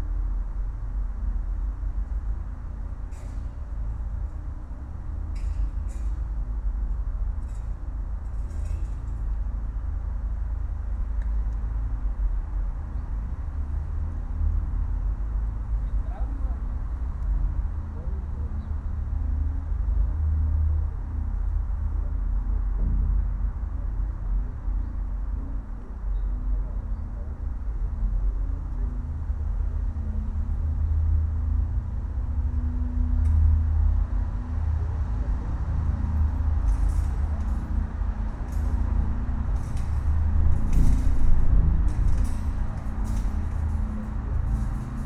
construction site, inside pvc pipe
urban rumour filtered through a pvc pipe found in a construction site. some nearby guards got curious and eventually kicked the recordist out of there...
Madrid, Spain, November 2010